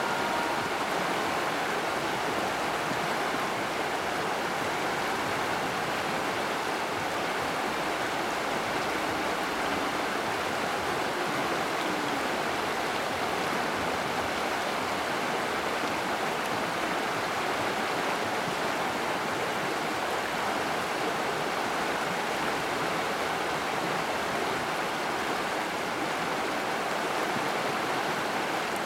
Pont d'Outrelepont, Malmedy, Belgique - Warche river
River is quite high.
Tech Note : Sony PCM-D100 internal microphones, wide position.
Wallonie, België / Belgique / Belgien